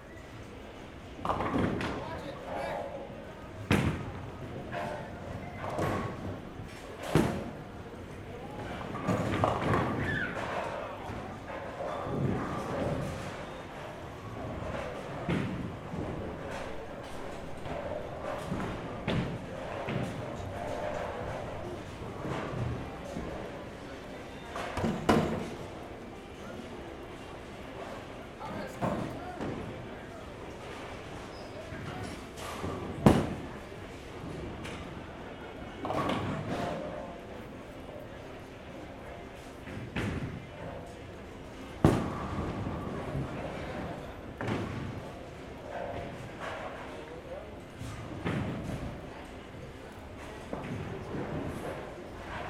Brookpark Rd, Cleveland, OH, USA - Rollhouse Parma
At the bowling alley with the recorder on the table behind the lane while playing a full game.